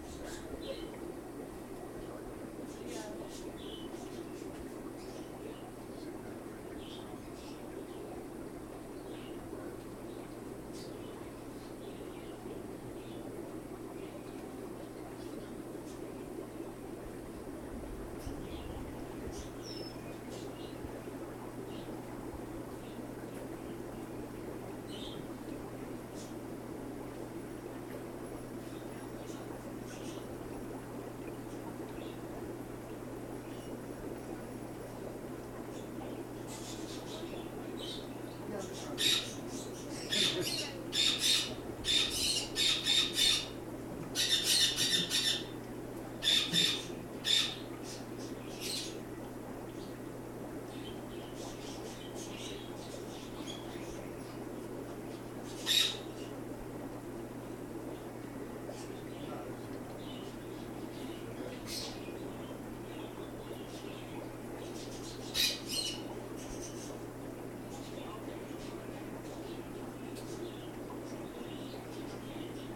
Ditmars Steinway, Queens, NY, USA - Petland Discount Aquarium and Bird Section
Petland Discount Aquarium and Bird Section